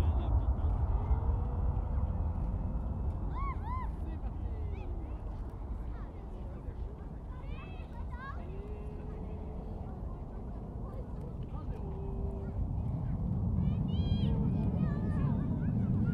Dorval, QC, Canada - Woohoo !
Plane spotting at YUL airport (Montreal, Canada)
A young girl nearby enjoyed the plane that was about to liftoff :)
Zoom H2N, 4 channel mode. No editing